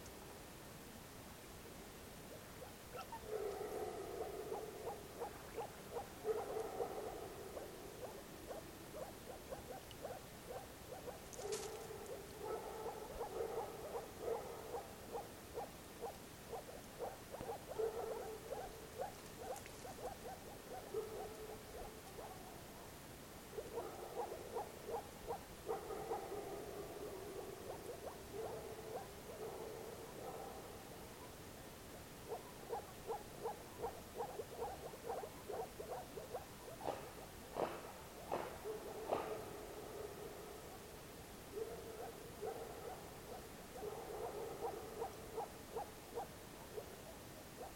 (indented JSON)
{
  "title": "Solec nad Wisłą, Polska - Early spring night on the small lake",
  "date": "2016-04-03 23:50:00",
  "description": "Raw sounds of nature.",
  "latitude": "51.07",
  "longitude": "21.76",
  "altitude": "129",
  "timezone": "Europe/Warsaw"
}